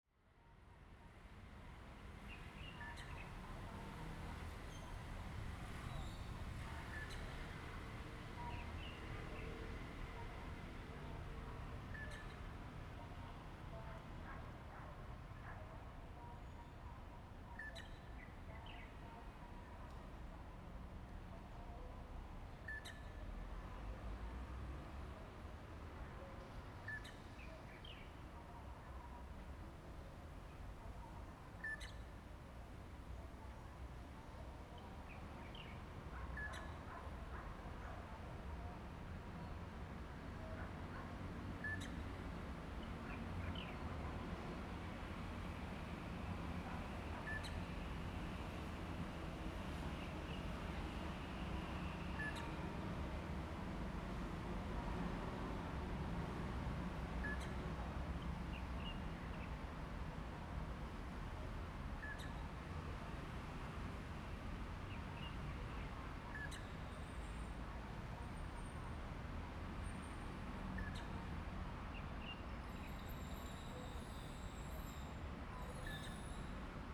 Zhongshan Rd., Miaoli City - train runs through
The train runs through, Next to the tracks, Fireworks sound, Bird call
Zoom H2n MS+XY
Miaoli County, Taiwan, 22 March